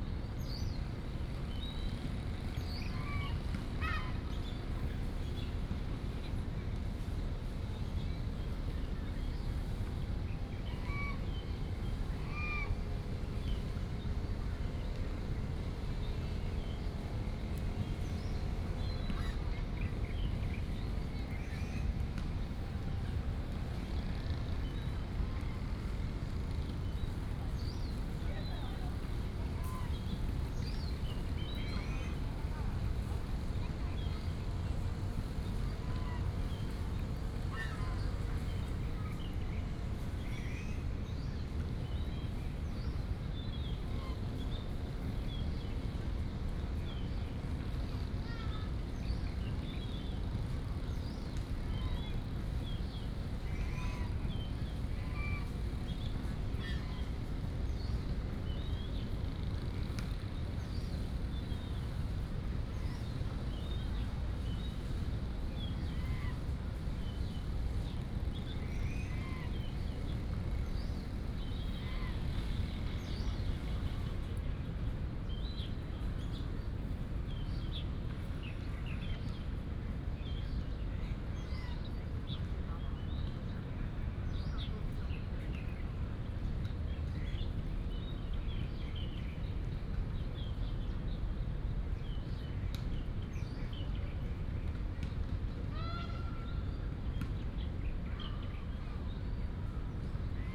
醉月湖, National Taiwan University - Bird sounds and Goose calls
At the university, Bird sounds, Goose calls, pigeon
Taipei City, Taiwan, 4 March